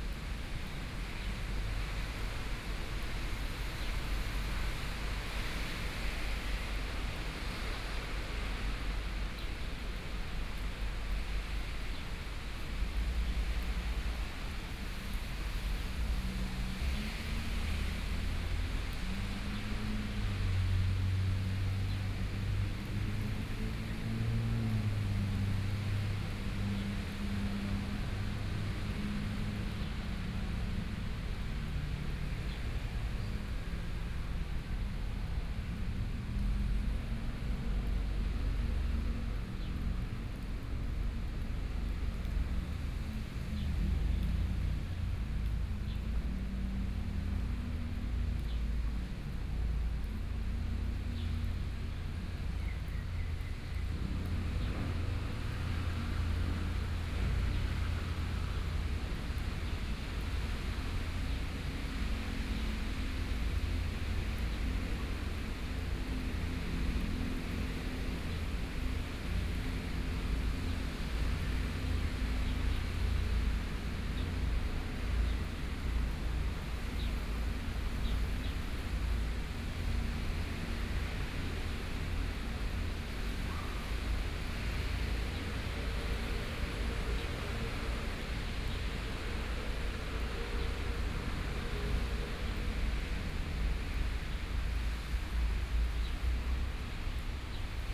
Yilan City, Taiwan - Place the morning
Place the morning, Rainy Day, Traffic Sound, Birdcall, Binaural recordings, Zoom H4n+ Soundman OKM II
Yilan City, Yilan County, Taiwan